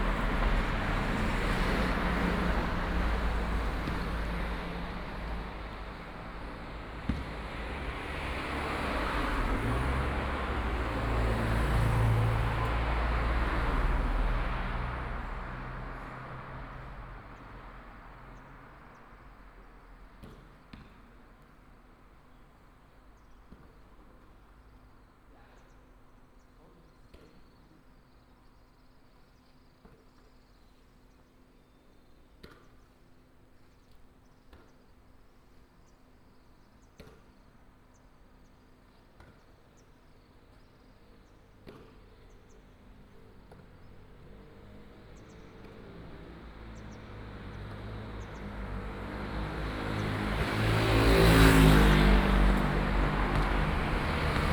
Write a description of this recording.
In front of the temple, Traffic sound, play basketball, Binaural recordings, Sony PCM D100+ Soundman OKM II